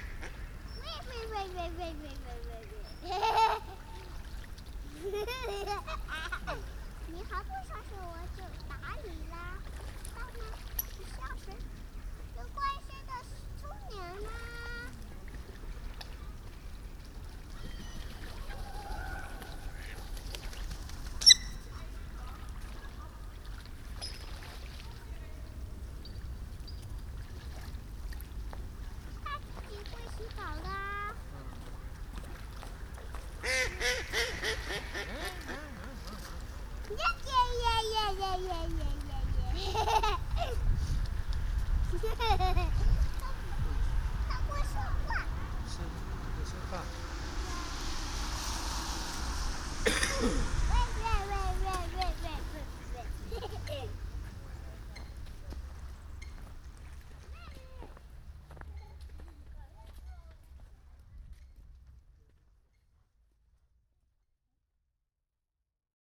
Chinese girl enjoying bird sounds
Small Chinese girl enjoying the sounds of coots and ducks at the Hofvijfer. Binaural recording.
The Hague, The Netherlands